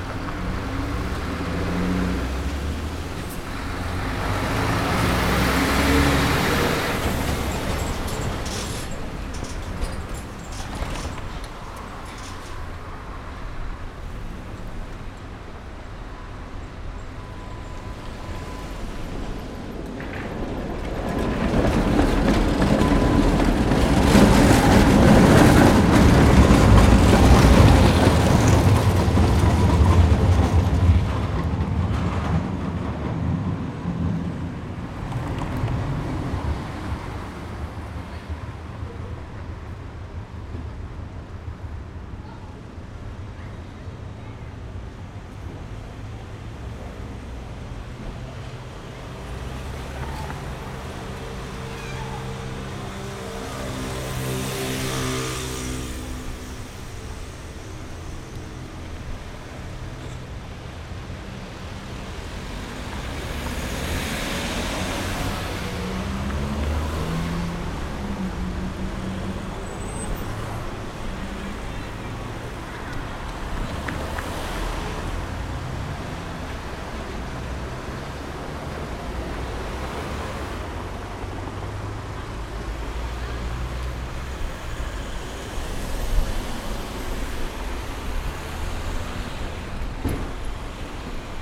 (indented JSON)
{
  "title": "leipzig lindenau, karl-heine-straße ecke zschochersche straße",
  "date": "2011-08-31 13:15:00",
  "description": "karl-heine-straße ecke zschochersche straße: eine vielbefahrene kreuzung zwischen verkehrslärm und momenten urbaner stille. autos, straßenbahnen, räder als urbane tongeber.",
  "latitude": "51.33",
  "longitude": "12.34",
  "altitude": "118",
  "timezone": "Europe/Berlin"
}